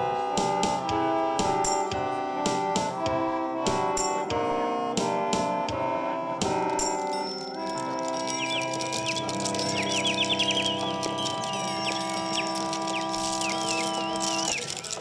berlin, sonnenallee: o tannenbaum - the city, the country & me: bar, project room 'o tannenbaum'
the city, the country & me: june 3, 2011